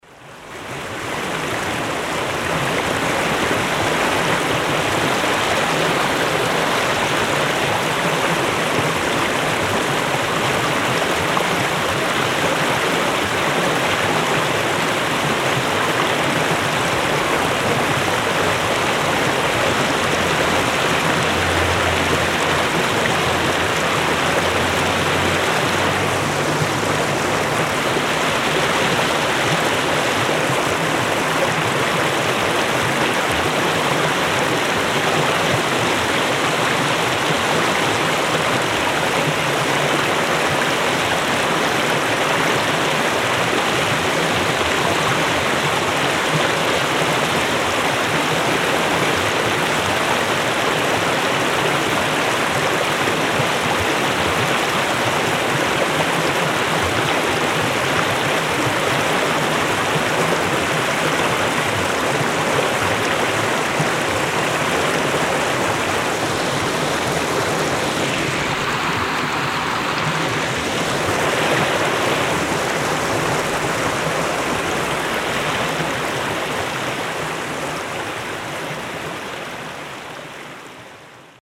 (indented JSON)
{
  "title": "velbert neviges, schloss hardenberg, bach",
  "description": "kleiner bachfall, mittags\nsoundmap nrw: social ambiences/ listen to the people - in & outdoor nearfield recordings",
  "latitude": "51.32",
  "longitude": "7.08",
  "altitude": "151",
  "timezone": "GMT+1"
}